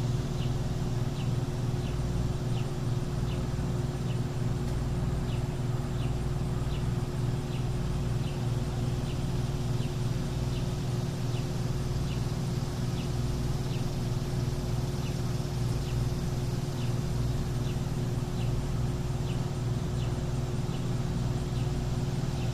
08.31 12:45min. nature, locusts, factory & 6 air conditioners (roland: edirol r-09)
PA, USA